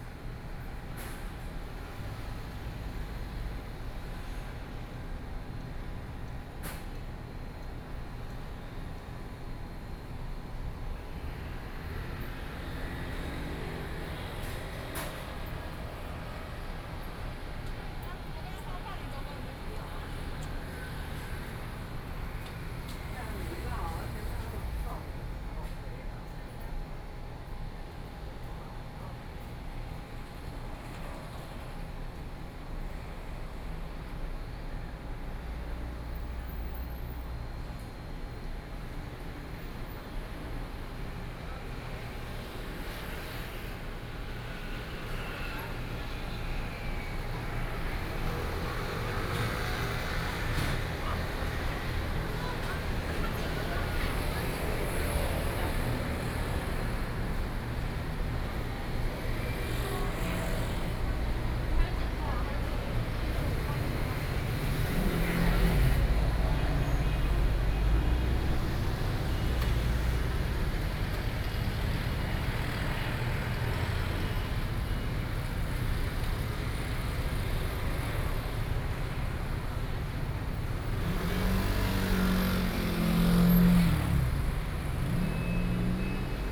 Zhongzheng Road, Zhongli City - Intersection
The corner of the road, Traffic Noise, Zoom H4n + Soundman OKM II